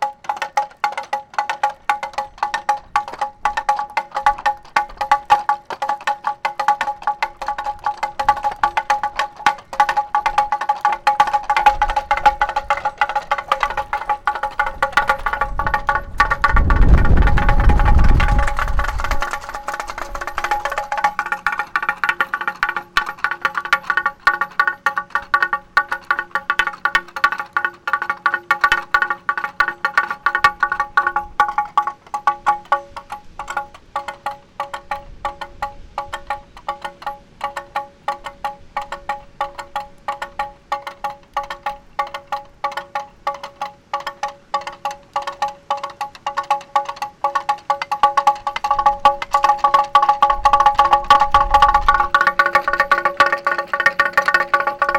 path of seasons, vineyard, piramida - wind rattle and wild plums in blossom